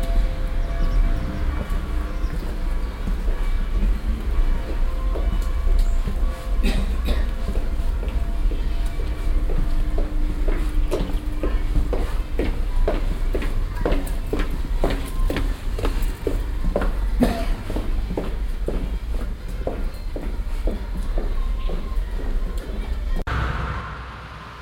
mettmann, kreuzstrasse, schritte, glocken
schritte in kleiner gasse auf steinpflaster, mittagsglocken der st. lambertus kirche
soundmap nrw: social ambiences/ listen to the people - in & outdoor nearfield recordings